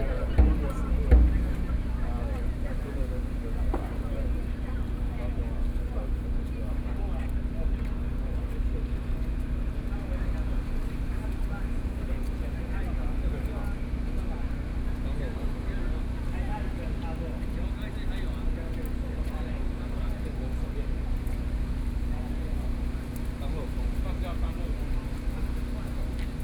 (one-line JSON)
{"title": "Chiang Kai-Shek Memorial Hall - Sound Test", "date": "2013-08-16 18:39:00", "description": "Sound Test, Sony PCM D50 + Soundman OKM II", "latitude": "25.04", "longitude": "121.52", "altitude": "8", "timezone": "Asia/Taipei"}